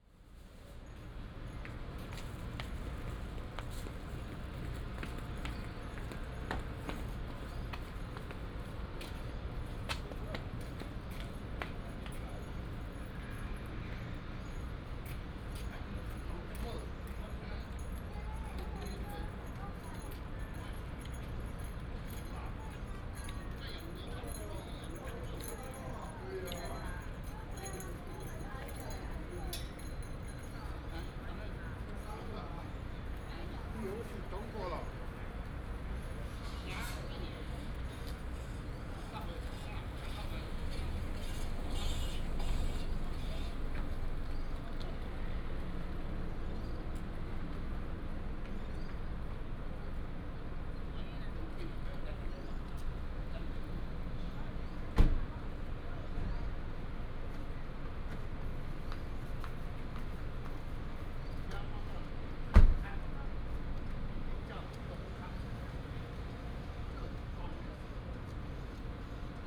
432台灣台中市大肚區頂街里 - Outside the station
Outside the station, Footsteps